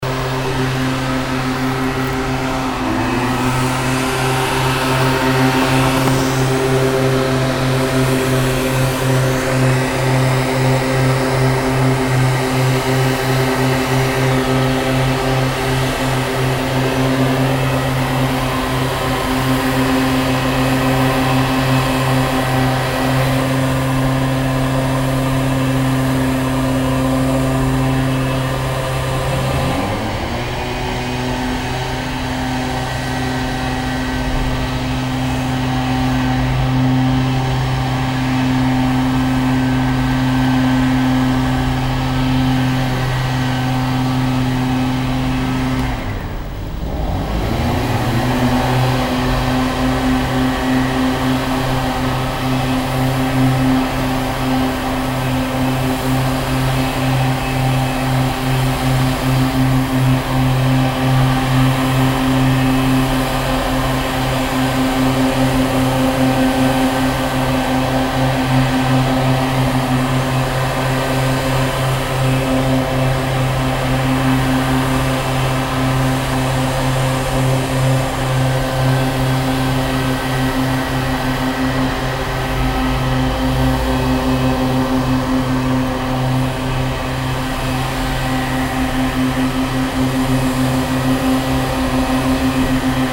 paris, noisiel, allee boris vian, air blower
two street workers clean the market place with air blower in the early morning
international cityscapes - social ambiences and topographic field recordings
13 October, 16:02